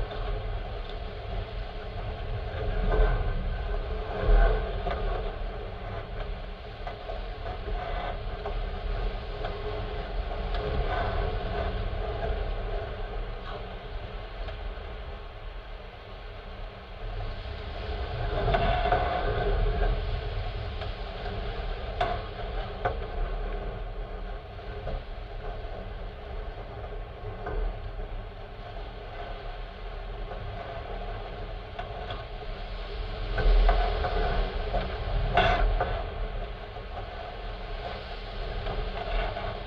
Šlavantai, Lithuania - Metal boat swaying
Dual contact microphone recording of a metal boat swaying in the wind and brushing against bulrush.
Alytaus apskritis, Lietuva, 28 June 2019